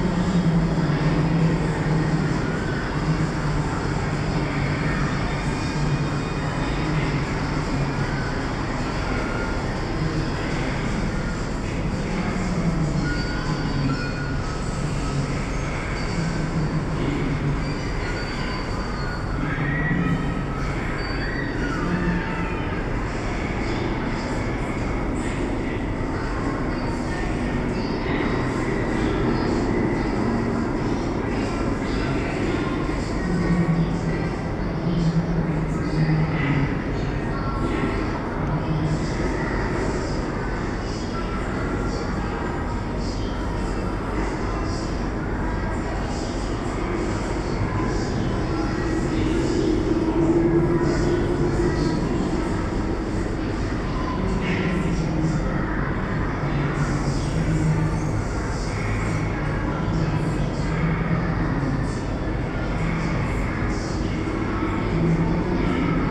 Oberkassel, Düsseldorf, Deutschland - Düsseldorf, Stoschek Collection, video installation
At the ground floor of the exhibition hall inside the left side of the hall. The sound of a three parted video installation. Recorded during the exhibition numer six - flaming creatures.
This recording is part of the exhibition project - sonic states
soundmap nrw - topographic field recordings, social ambiences and art places
Düsseldorf, Germany